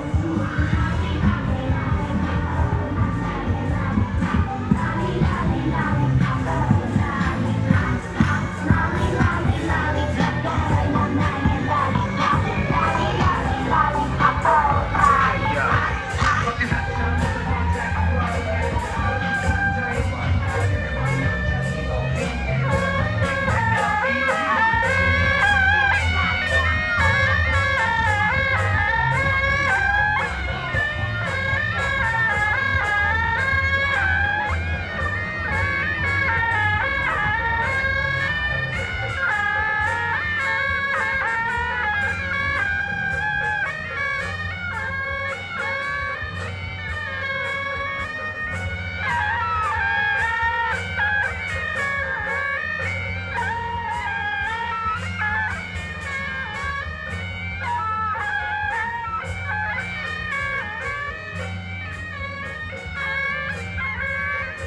Traditional temple festival parade, Traffic Sound
Zoom H4n+Rode NT4 ( soundmap 20120625-36 )